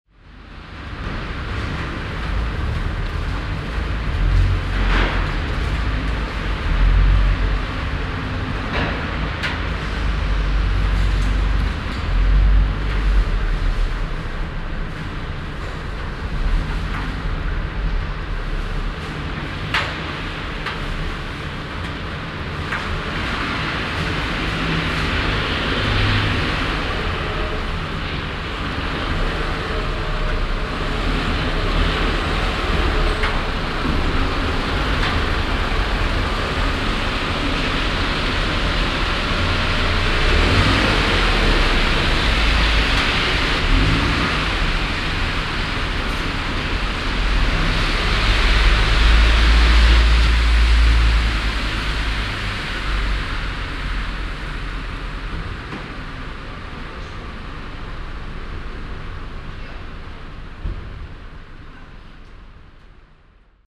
ratingen, kirchgasse, city parkhaus
fahrzeuge und lüftungsresonazen im city parkhaus, morgens
soundmap nrw
topographic field recordings and social ambiences